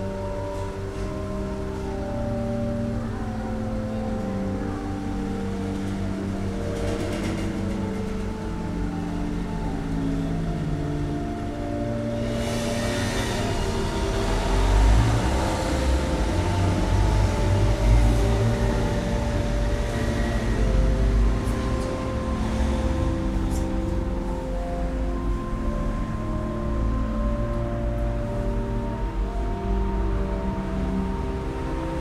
Oude Kerk, Zoetermeer
church organ, traffic
Zoetermeer, The Netherlands, 13 October 2010